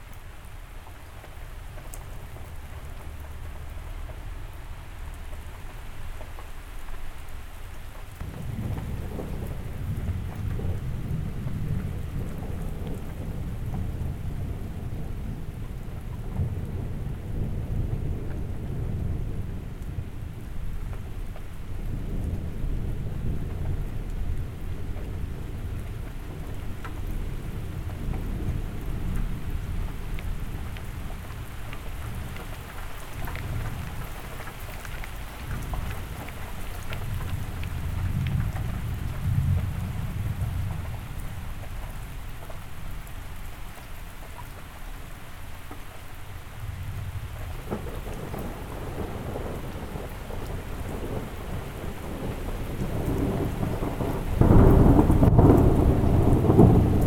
Court-St.-Étienne, Belgique - The storm
A big threatening storm, on a wet hot evening.
Court-St.-Étienne, Belgium, 5 June 2015, 20:42